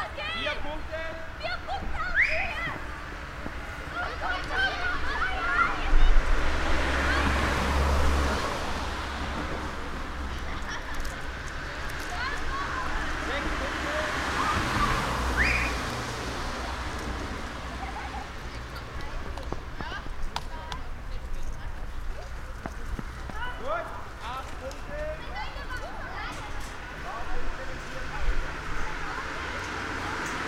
leipzig lindenau, sportanlage friesenstraße
sportfest mit kindern in der sportanlage friesenstraße. kinder und sportlehrer, autos, eichenlaub raschelt am mikrophon.